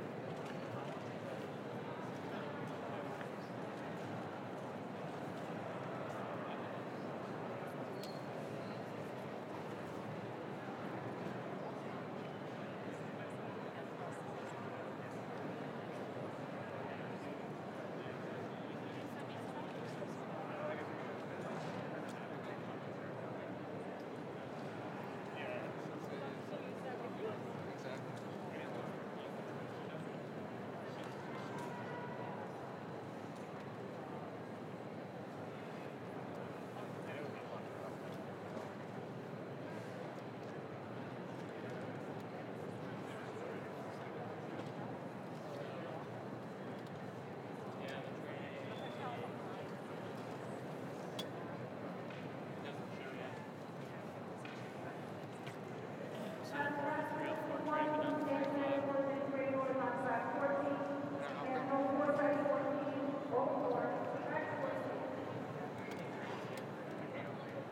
Sounds from the Moynihan Train Hall at New York Penn Station.
United States, 26 February 2022